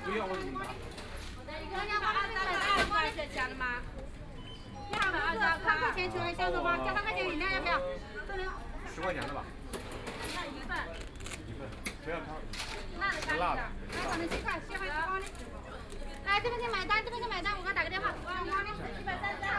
South Xizang Road, Shanghai - In convenience stores
In front of the checkout counter, Binaural recording, Zoom H6+ Soundman OKM II